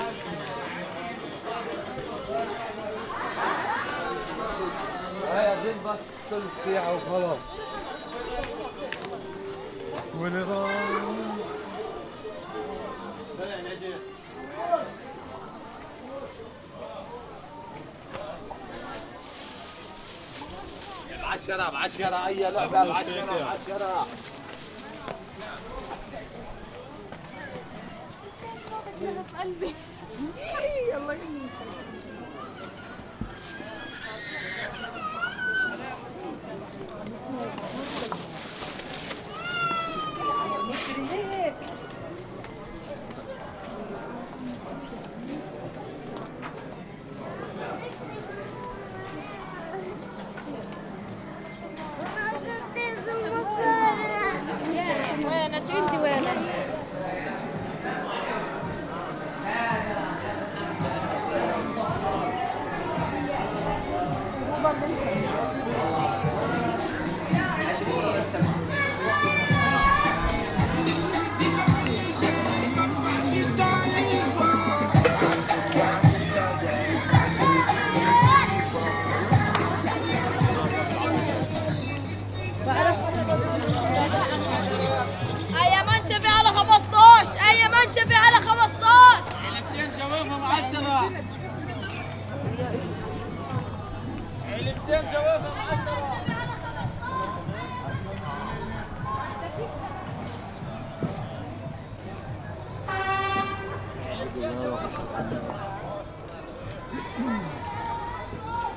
Friday morning at Damascus Gate Market, Muslim part of Jerusalem. Loud music is coming out of the stoles, crowed is passing by, A mother is taking care of her crying child, young boys are shouting in Arabic to sell their products.